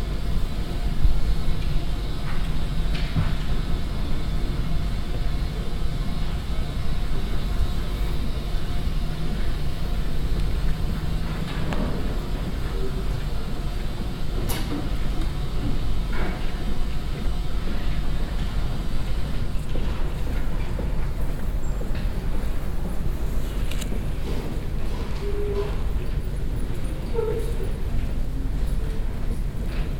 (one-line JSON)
{"title": "Zentralbibliothek @ TU Berlin - Fensterschließung", "date": "2022-02-10 14:33:00", "description": "Special Thanks to the Pförtner for opening the windows for me", "latitude": "52.51", "longitude": "13.33", "altitude": "36", "timezone": "Europe/Berlin"}